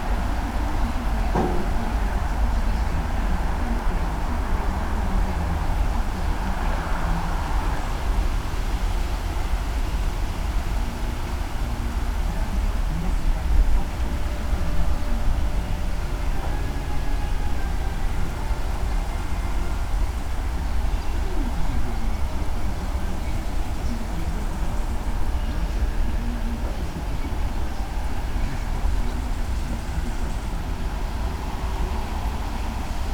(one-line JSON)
{"title": "Rogalinek village, Polska - tractor repair", "date": "2022-07-23 14:06:00", "description": "a man repairing a tractor in a barn and listing to a radio. muffled sounds of tools and parts being moved around. wind in trees nearby (roland r-04)", "latitude": "52.25", "longitude": "16.90", "altitude": "59", "timezone": "Europe/Warsaw"}